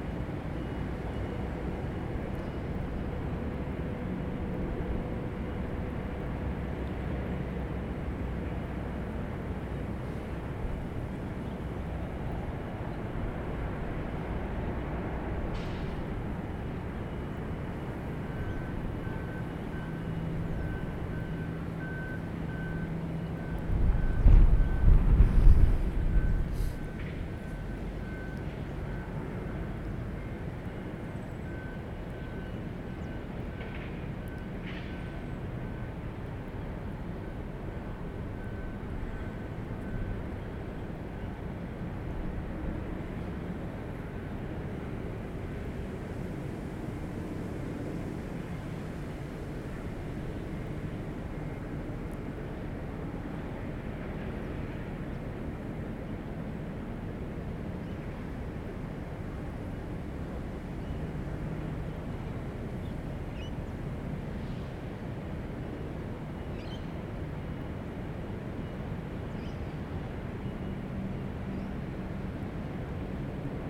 Innovation Way, North Wollongong NSW, Australia - Monday Mornings at UOW Innovation
Recording on the grass behind the UOW Innovation Campus